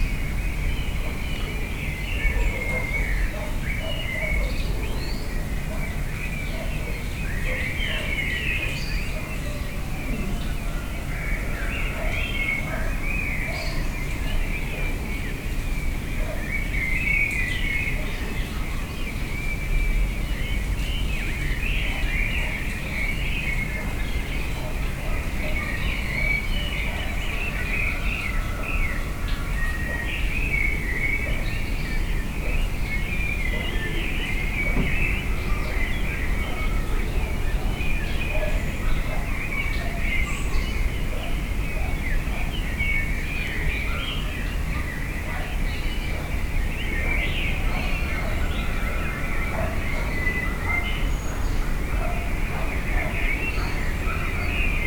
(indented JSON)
{
  "title": "Funchal, Residencial Pina - morning on the balcony",
  "date": "2015-05-03 05:43:00",
  "description": "(binaural) morning sounds spreading over the city of Funchal. fantastic, liquid sounding bed of bird chirps.",
  "latitude": "32.66",
  "longitude": "-16.91",
  "altitude": "89",
  "timezone": "Atlantic/Madeira"
}